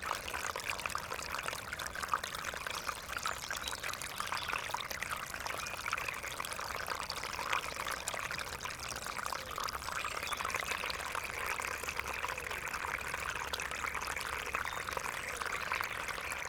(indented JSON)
{
  "title": "Ton, Niedertiefenbach, Deutschland - source of a little creek",
  "date": "2016-03-28 18:10:00",
  "description": "little creek soon after its outflow from a pond. the whole area is wet, lots of surface water and puddles, a clay pit decades ago.\n(Sony PCM D50)",
  "latitude": "50.45",
  "longitude": "8.15",
  "altitude": "252",
  "timezone": "Europe/Berlin"
}